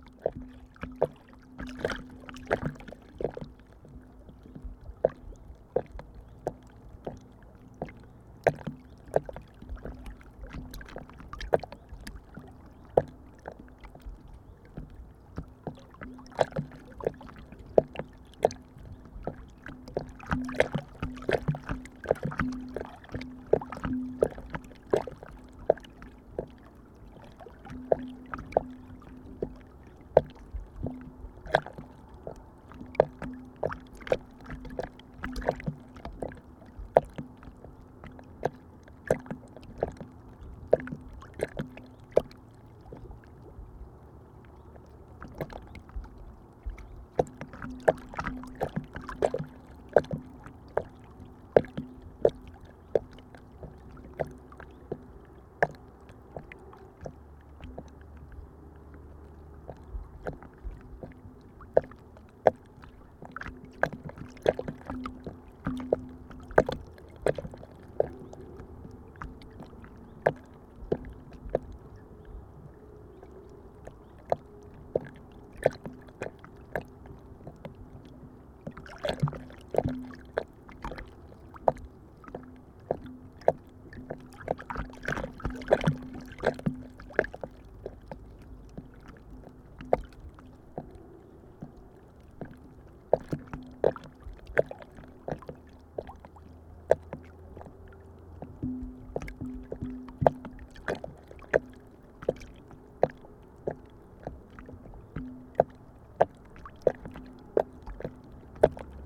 Utena, Lithuania, lakeshore stones
3 channell recording: a pair of small omnis between stones at lakeshore and geophone on the biggest stone